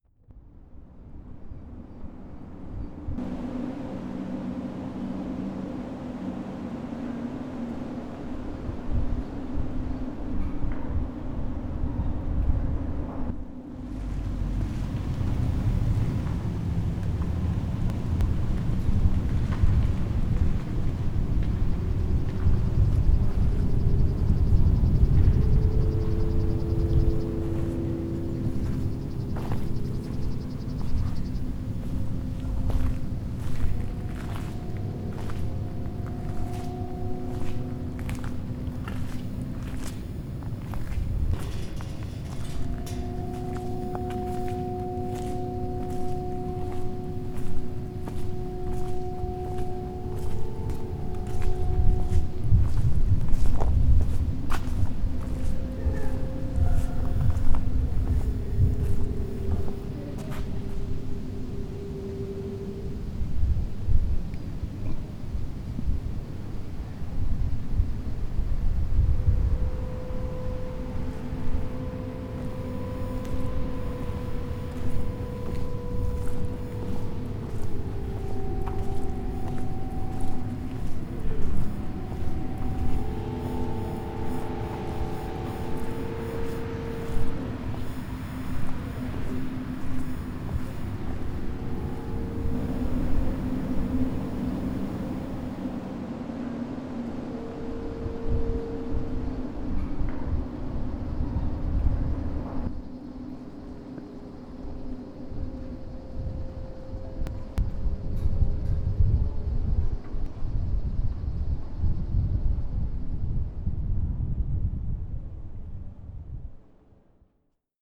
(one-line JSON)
{"title": "Rybí trh, Město, Opava, Czechia - Lešení na kostele ve větru", "date": "2020-08-04 23:32:00", "description": "Aiolská harfa vytvořená z kovového lešení na kostele Nanebevzetí Panny Marie, nahráno v noci a ve větru", "latitude": "49.94", "longitude": "17.90", "altitude": "270", "timezone": "Europe/Prague"}